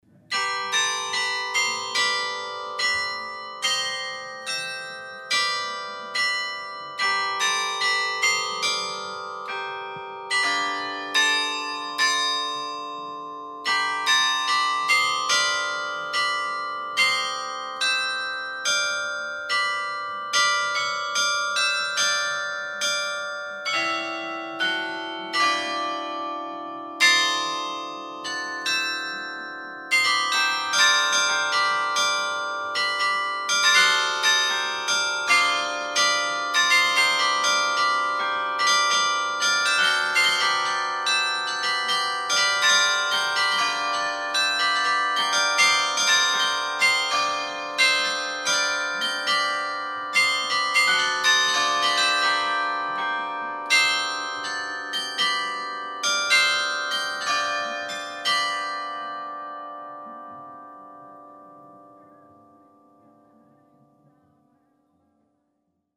vianden, bell tower
Recorded inside the bell tower a second example of the bell ensemble playing a melody.
Vianden, Glockenturm
Aufgenommen im Glockenturm: ein zweites Beispiel einer Glockenmelodie.
Vianden, clocher
Enregistré à l’intérieur du clocher pour un deuxième exemple du carillon dans son ensemble.
Project - Klangraum Our - topographic field recordings, sound objects and social ambiences